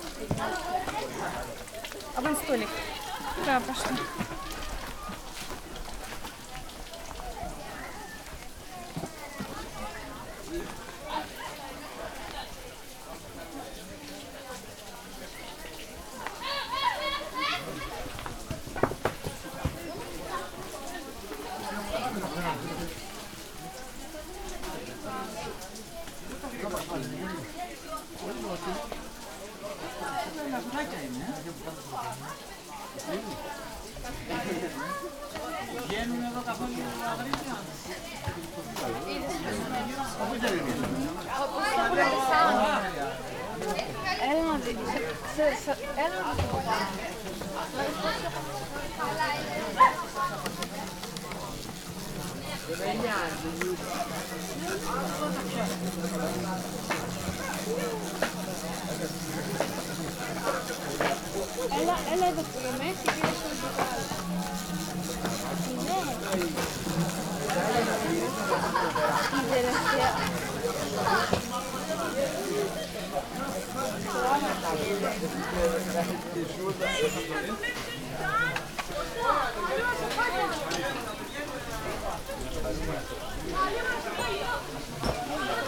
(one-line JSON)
{"title": "Crete, Samaria Gorge, rest area - hikers resting", "date": "2012-09-29 13:01:00", "description": "active place, lots of hikers resting, having lunch, kids playing, a hornet trapped in a plastic bottle.", "latitude": "35.29", "longitude": "23.96", "altitude": "341", "timezone": "Europe/Athens"}